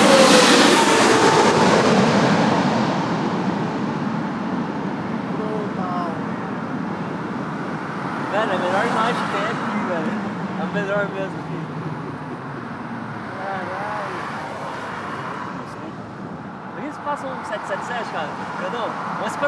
Manchester International Airport - Ringway Road
On Ringway Road, facing runway 23R.
Greater Manchester, UK, 21 September 2010